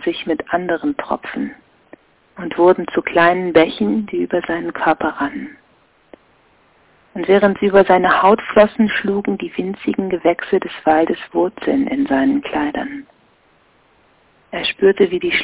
regen wasser rauschen - Ray Bradbury: Der lange Regen (1951) 02.12.2007 19:42:50